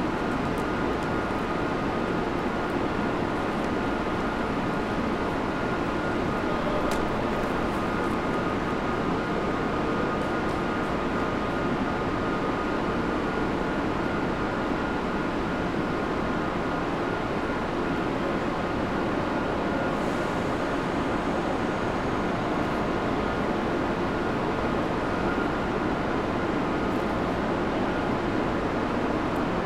While during the lockdown no trains left for Amsterdam Centraal without any anouncement, on this day a train is leaving. A man is asking for a Euro, he has as he says only 72 Euro but needs 73, he then asks other people.